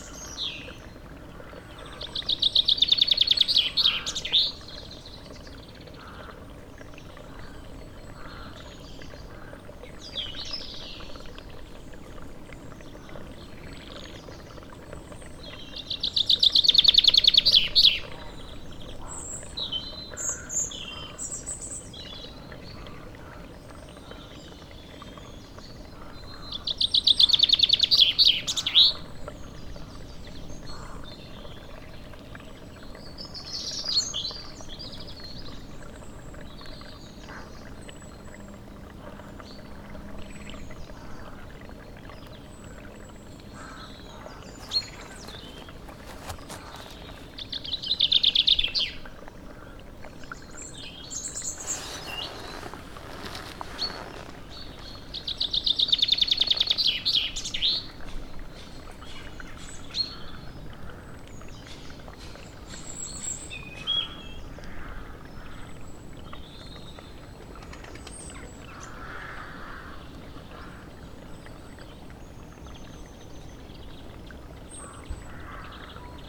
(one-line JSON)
{"date": "2011-02-27 12:48:00", "description": "outside Nottington Church mid day early spring", "latitude": "50.64", "longitude": "-2.49", "altitude": "25", "timezone": "Europe/London"}